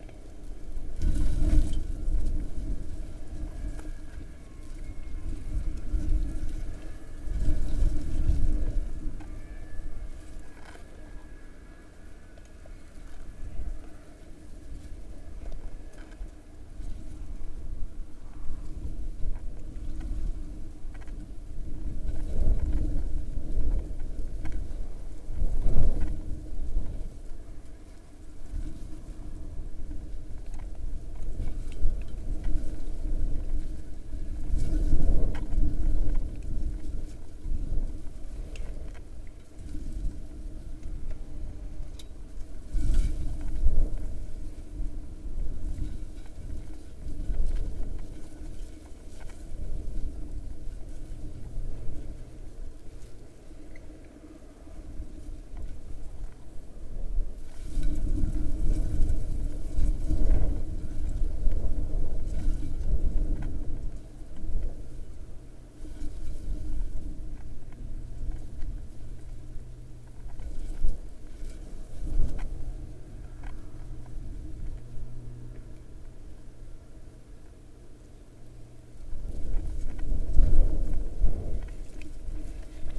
Dungeness, Romney Marsh, UK - Blustery Resonance
Miniature microphones inside plastic waste on the beach. Wind.
England, United Kingdom, 17 September 2010, 17:18